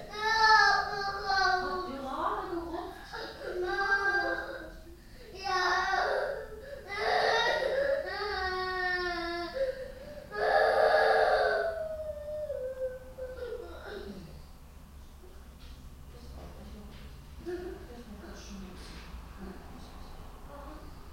cologne, sachsenring, balloon whistles and kids cry
the whisteling of some ballons on a child's birthday, then the crying of the frightened child
soundmap nrw: social ambiences/ listen to the people in & outdoor topographic field recordings